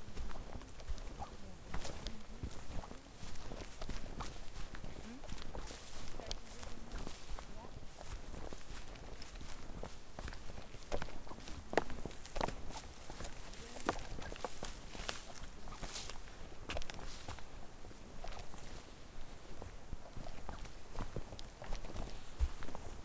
Trehörningsjö, vandring på stig - Walking trail
Walking alongside the Husån rapids through the woods on the trail back to Kerstins Udde for coffe discussions about the sound experiences on the soundwalk on World Listening Day, 18th july 2010.
Sweden, 18 July